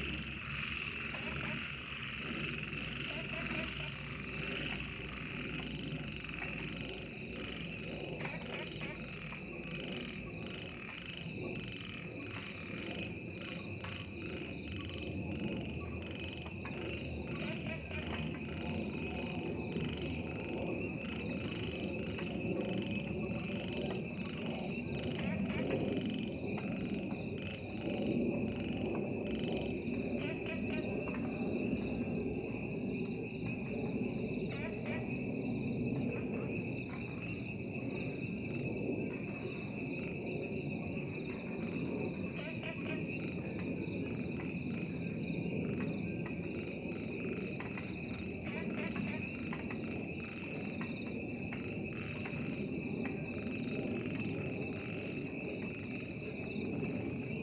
江山樂活, 埔里鎮桃米里 - frog and Aircraft
All kinds of frog sounds, Aircraft flying through
Zoom H2n MS+XY
Puli Township, 華龍巷164號, 19 April